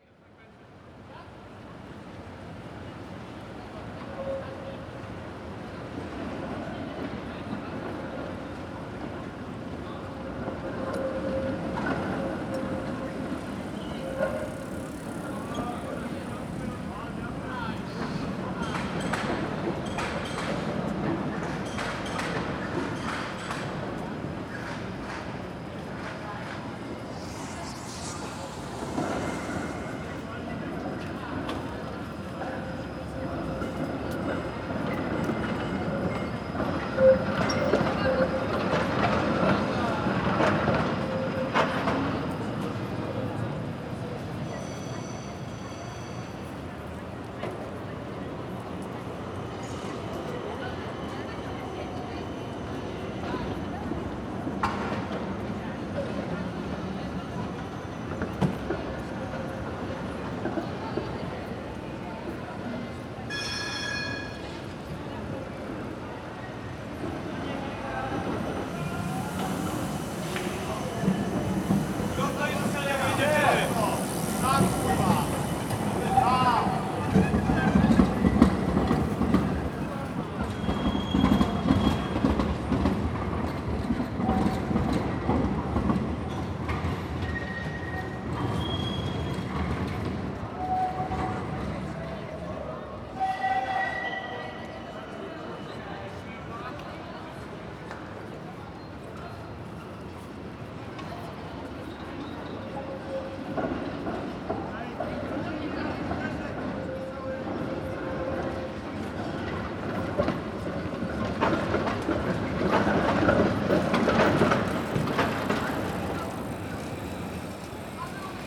Poznnan, downtown, near Okraglak office building - evening tram activity
many trams cross their way at this intersection distributing denizens to all parts of the city. seems that they have quite a busy schedule as trams pass here continually. the squeal of wheels on the tracks and car rattle reverberates nicely off the old tenements.
Poznan, Poland, October 2014